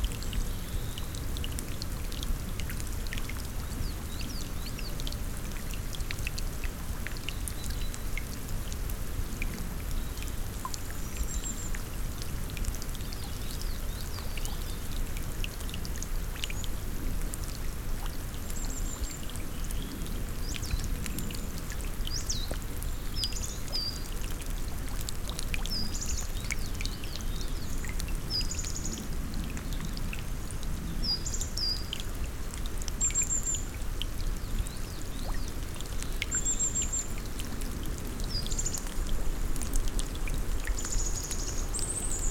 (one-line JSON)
{"title": "Picos de Urbion, Soria, Spain - Picos de Urbion - paisagem sonora", "date": "2013-05-16 00:58:00", "description": "Uma paisagem sonora de Picos de Urbion, junto ao nascimento do rio Douro. Mapa Sonoro do rio Douro. A soundscape from Picos de Urbion, next to the source of the Douro river. Douro river Sound Map.", "latitude": "42.00", "longitude": "-2.89", "altitude": "1901", "timezone": "Europe/Madrid"}